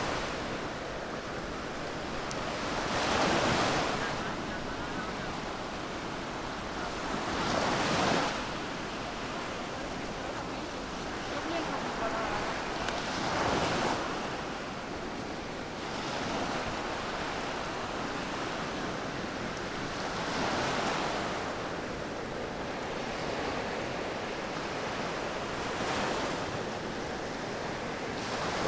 Av. Alacant, Cullera, Valencia, España - Anochecer en la Playa de Cullera
Anochecer en la playa de Cullera. Dando un paseito y nos paramos para grabar un ratito al lado de la orilla mientras el sol se ponía a nuestras espaldas.
Disfrutando cerca del sonido de mi mar :)